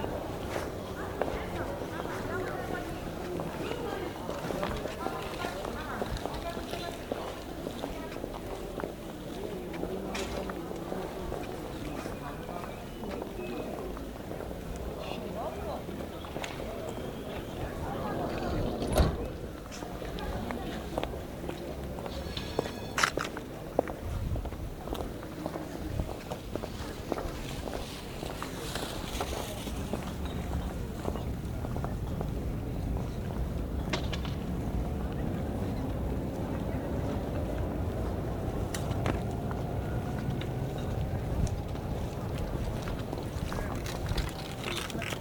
Ku Sloncu, Szczecin, Poland
In front of the main cemetery gate.
31 October 2010, 15:04